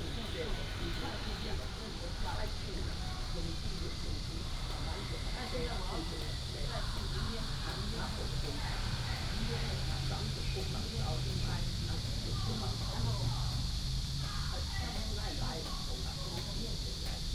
Fudan Park, Pingzhen Dist. - in the park
Cicada cry, birds sound, The elderly, traffic sound
Pingzhen District, Taoyuan City, Taiwan, 26 July